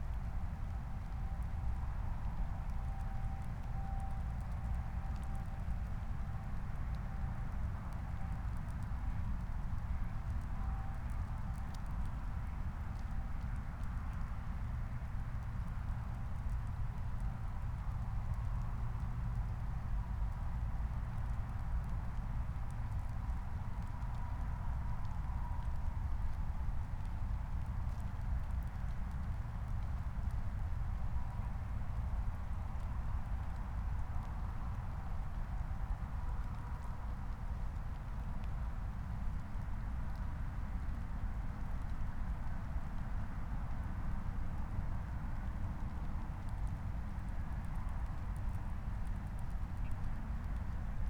Moorlinse, Berlin Buch - near the pond, ambience
20:19 Moorlinse, Berlin Buch